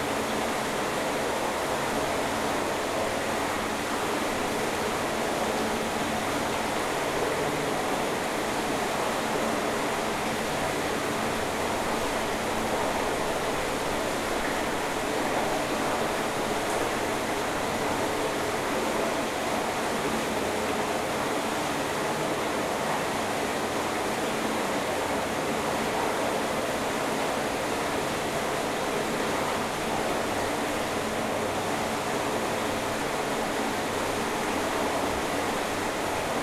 remscheid, eschbachtal: wasserwerk, gully - the city, the country & me: remscheid waterworks, gully
the city, the country & me: may 8, 2011